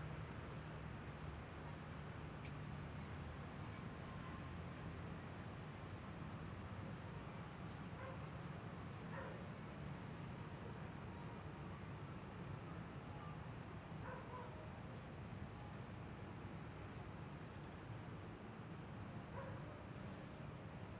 臺北市立兒童育樂中心, Taipei City - Aircraft flying through
Aircraft flying through, Dogs barking, Traffic Sound, People walking in the park
Please turn up the volume a little
Zoom H6, M/S